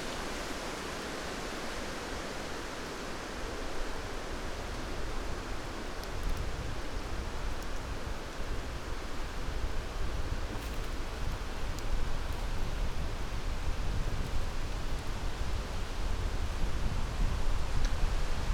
summer afternoon with strong and hot winds
29 July 2013, ~19:00